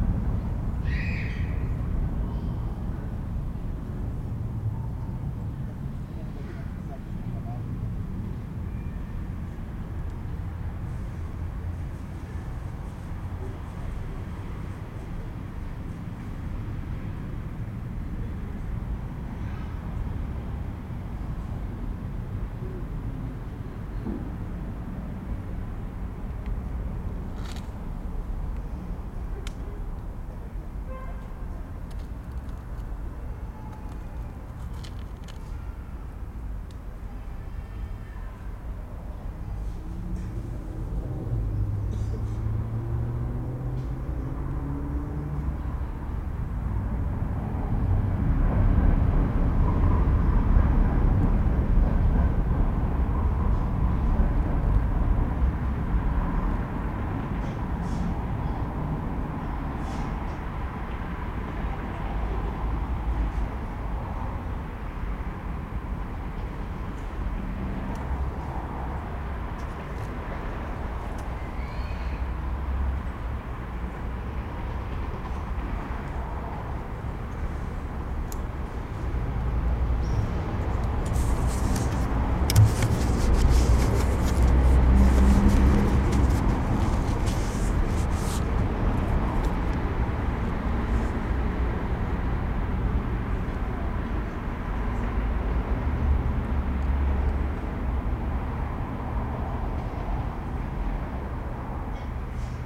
leipzig, karl-heine-platz, auf einer bank unter bäumen.
auf einer bank im park, stille, die straße von ferne.